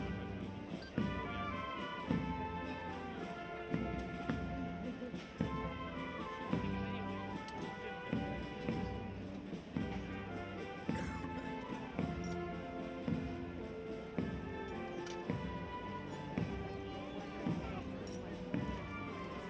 Av. Esteiro, Ferrol, A Coruña, España - Jueves Santo
Procesión de la Pontifica, Real e Ilustre Cofradía de Nuestra Señora de las Angustias. During the Eaters, parishioners walk the streets in procession, dressed in tunics, carrying religious sculptures and playing pieces of music.
A Coruña, Galicia, España, April 2022